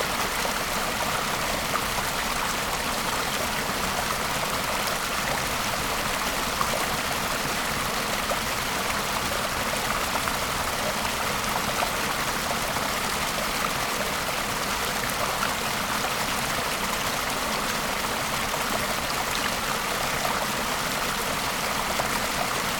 Merthyr Tydfil, UK - Cooling stream after a hot day of hiking
Recorded with LOM Mikro USI's and Sony PCM-A10.